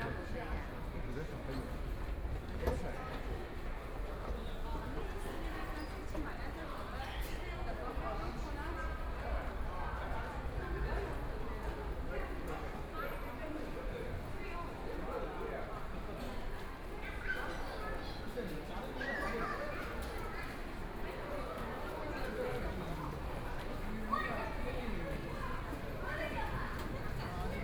Laoximen Station, Shanghai - in the station
Walking through the station, Conversion to another subway line, On the platform waiting for the train, Binaural recording, Zoom H6+ Soundman OKM II